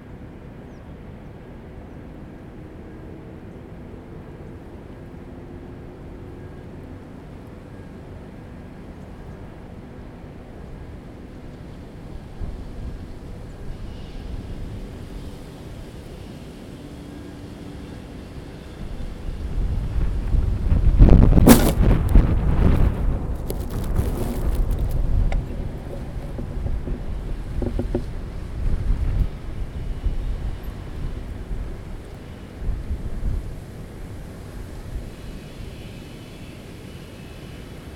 Innovation Way, North Wollongong NSW, Australia - Monday Mornings at UOW Innovation
Recording on the grass behind the UOW Innovation Campus